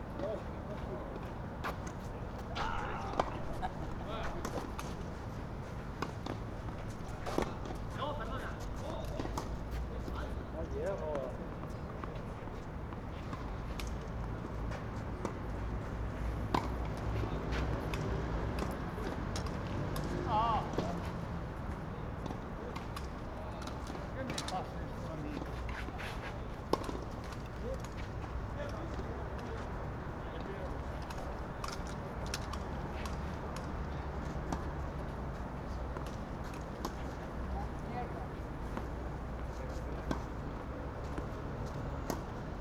Jubilated men playing tennis in a working day morning